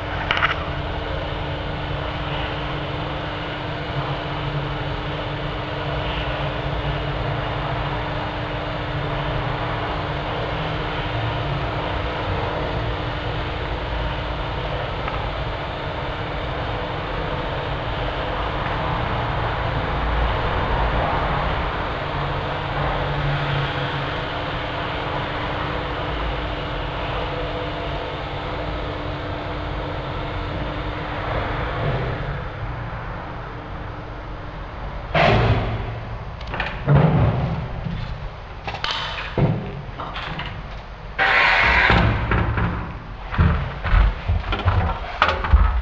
old elevator, naples. via della quercia
Naples, Italy